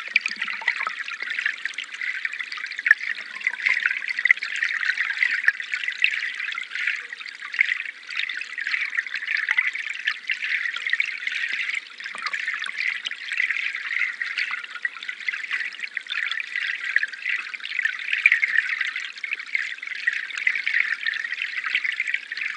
Recorded with pair of Aquarian Audio H2a hydrophones and a Sound Devices Mixpre-3.
25 July 2020, ~12:00